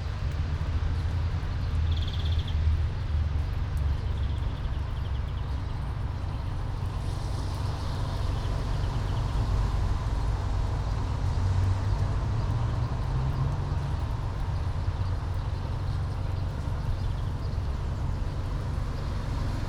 all the mornings of the ... - apr 5 2013 fri